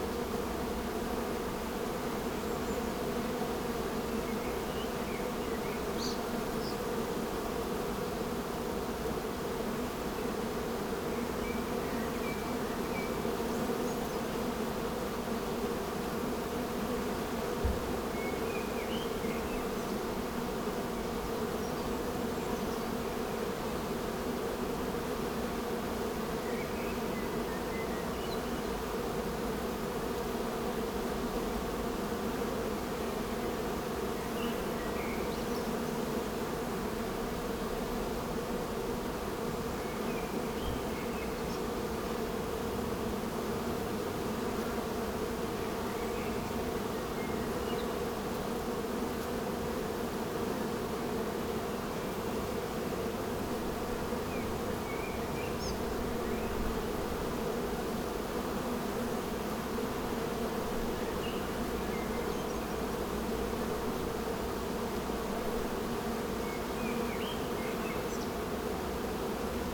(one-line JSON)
{"title": "Botanischer Garten Oldenburg - bee hive", "date": "2018-05-27 15:50:00", "description": "bee hives revisited the other day, mics a bit more distant\n(Sony PCM D50, internal mics 120°)", "latitude": "53.15", "longitude": "8.20", "altitude": "7", "timezone": "Europe/Berlin"}